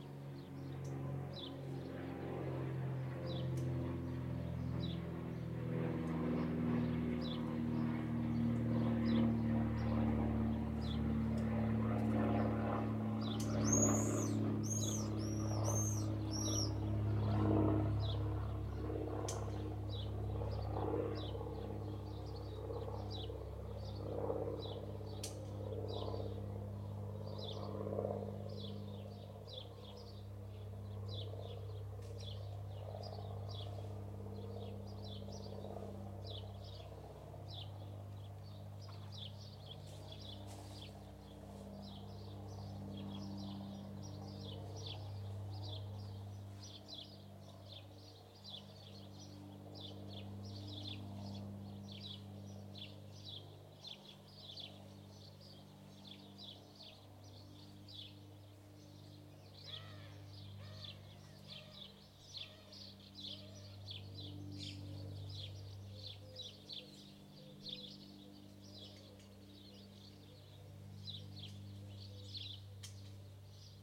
edge of Cabrieres, overlooking the Chemin Vieux - evening village ambiance
Overlooking the Chemin Vieux, a neighbour prunes her wisteria, birds call, light aircraft passes above, dog barks, distant childrens voices